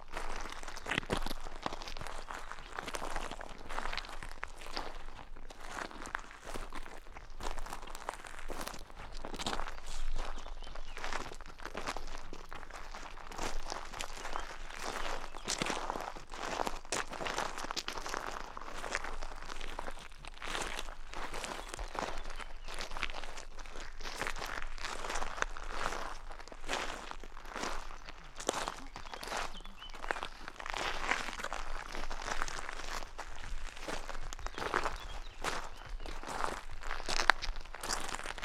Dorset AONB, Dorchester, Dorset, UK - bushes
At Bronkham Hill Barrows. The Bronkham Hill group of round barrows includes four bells and one double bowl in a mile-long linear cemetery running North West-South East. supported by HLF and WDDC